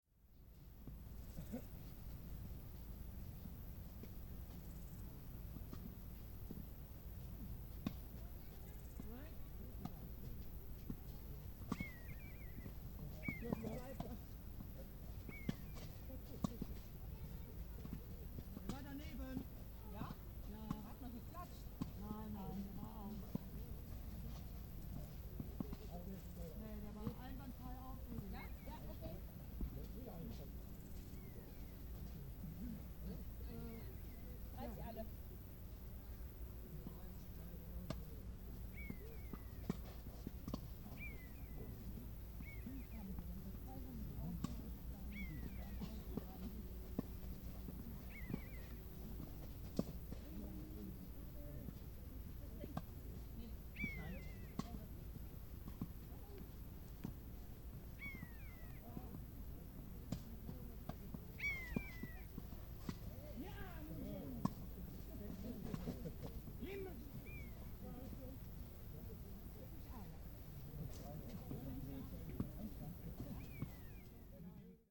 Wachendorf, Tennisplatz, Bussard
05.07.2008, 15:00
auf dem weg zur bruder-klaus kapelle.